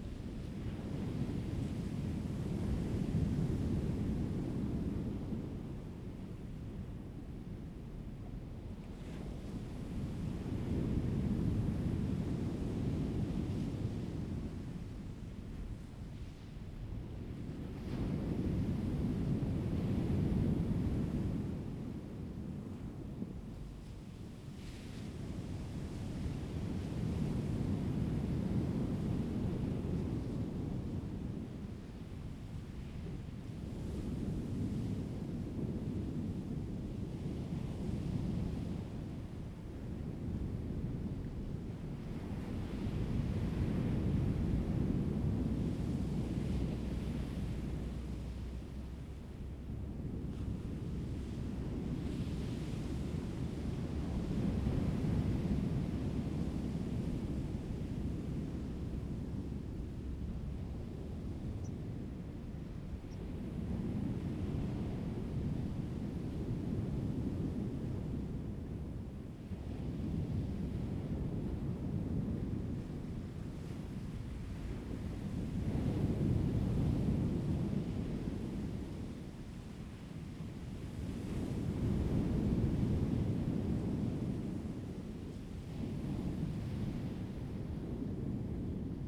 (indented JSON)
{
  "title": "南田村, Daren Township - the waves",
  "date": "2014-09-05 14:19:00",
  "description": "Sound of the waves, The weather is very hot, Circular stone coast\nZoom H2n MS +XY",
  "latitude": "22.29",
  "longitude": "120.89",
  "altitude": "1",
  "timezone": "Asia/Taipei"
}